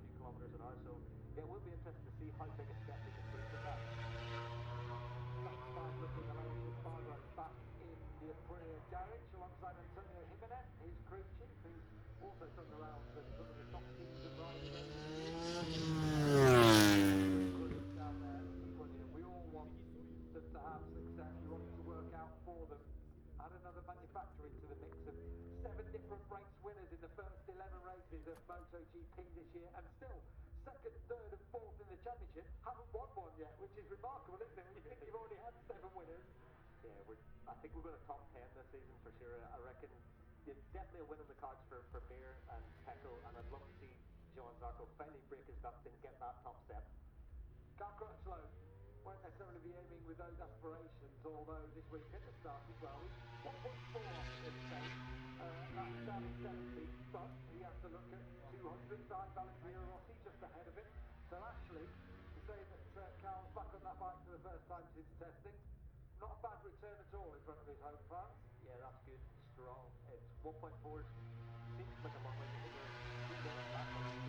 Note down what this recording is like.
moto grand prix free practice two ... maggotts ... olympus 14 integral mics ...